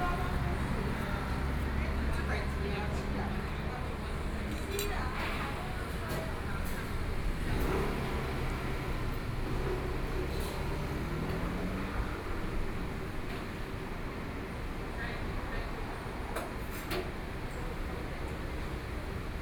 鹽埕區中原里, Kaoshiung City - In the restaurant
In the restaurant, Traffic Sound
Kaohsiung City, Taiwan